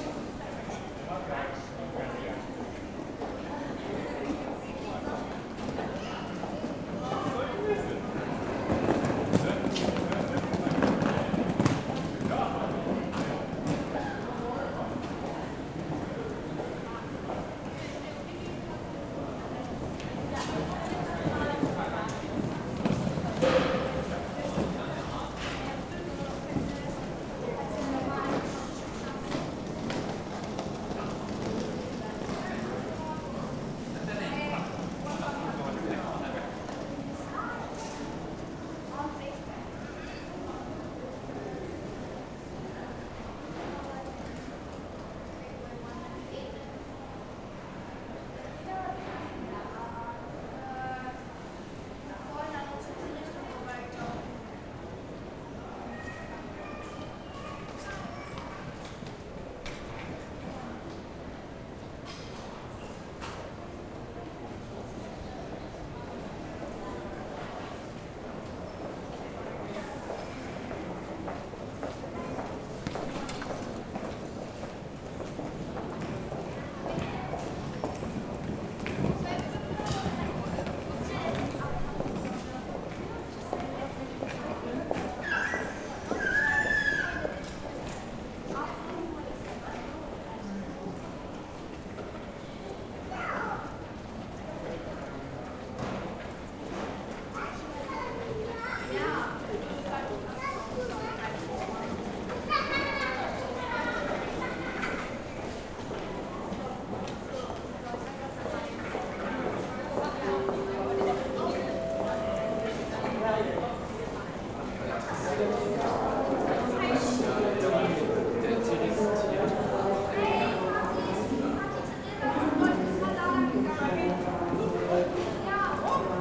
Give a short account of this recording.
idling at Tegel airport, main hall, while waiting for arrival